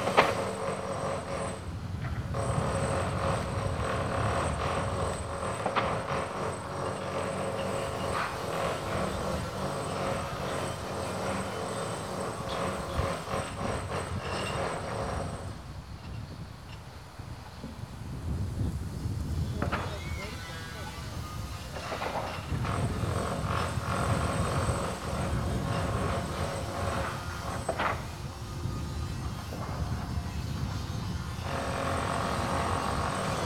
Poznan, university campus construction site - another construction site
at the beginning of the recording a truck passing just by the mics, then various construction sounds